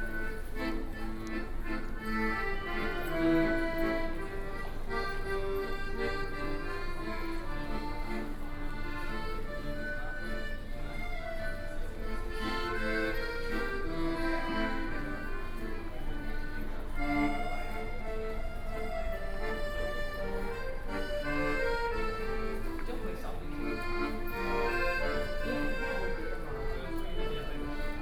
Chiang Kai-Shek Memorial Hall Station, Taipei - MRT Station
Accordion artists, Sony PCM D50 + Soundman OKM II
台北市 (Taipei City), 中華民國, 24 May 2013, 19:00